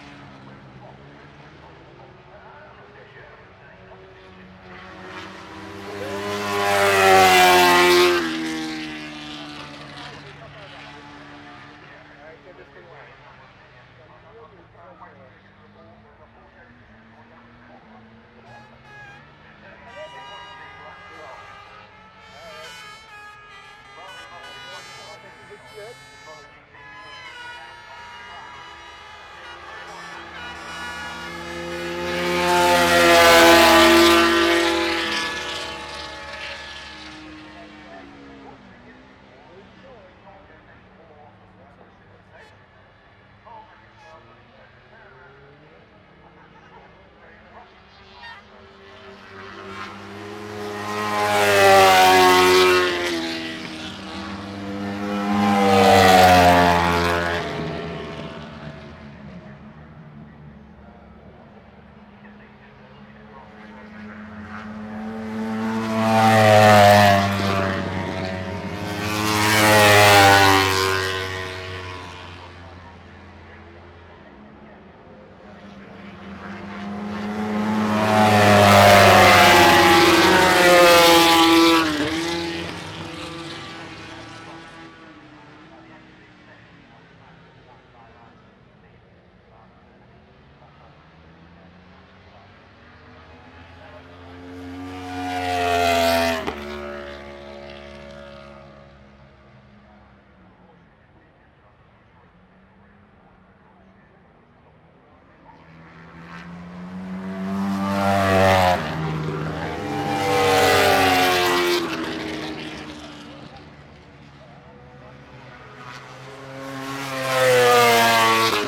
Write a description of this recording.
British Motorcycle Grand Prix 2006 ... MotoGP warm up ... one point stereo mic to mini-disk ...